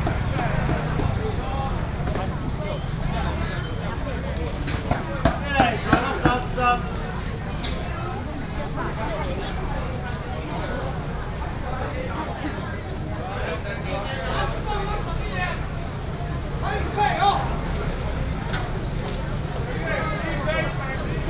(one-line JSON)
{"title": "國慶黃昏市場, Banqiao Dist., New Taipei City - Evening market", "date": "2017-04-30 17:03:00", "description": "in the Evening market, Traffic sound", "latitude": "25.00", "longitude": "121.46", "altitude": "20", "timezone": "Asia/Taipei"}